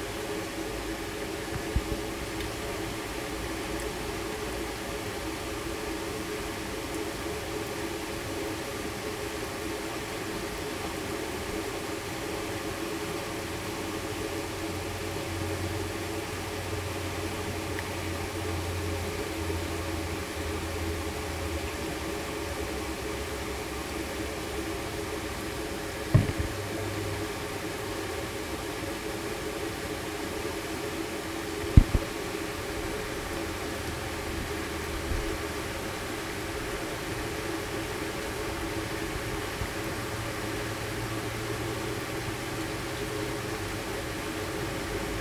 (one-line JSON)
{"title": "Byker Bridge, Newcastle upon Tyne, UK - Ouseburn River through a tube", "date": "2019-10-13 15:12:00", "description": "Walking Festival of Sound\n13 October 2019\nOuseburn River through a tube", "latitude": "54.98", "longitude": "-1.59", "altitude": "14", "timezone": "Europe/London"}